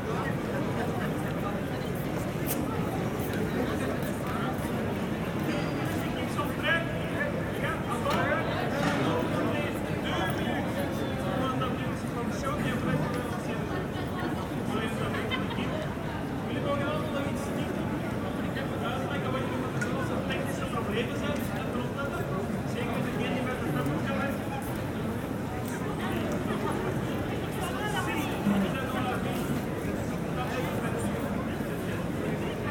Grand Place, Bruxelles, Belgique - Grand-Place with tourists
Tech Note : Ambeo Smart Headset binaural → iPhone, listen with headphones.